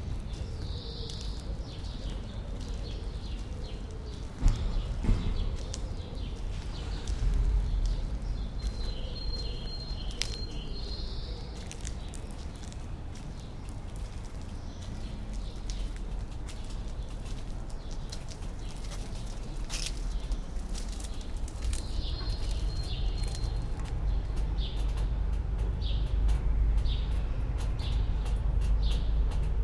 Sophienstraße, Berlin, Germany - walk around small park
walk between trees and around church, church bells, raindrops, sandy and grass paths, birds, traffic
Deutschland, European Union, 17 May 2013, ~20:00